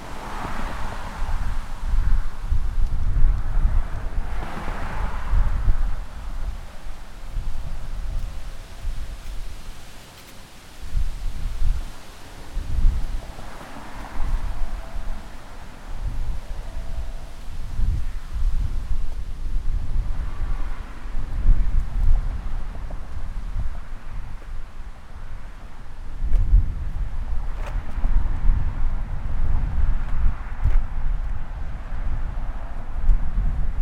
The Glebe, Ottawa, ON, Canada - Windy Walk by the Road
Recorded with an H5 portable microphone in a small park close to a busy roadway. It was an extremely windy day, so it wasn't optimal conditions for recording.
24 October, 14:00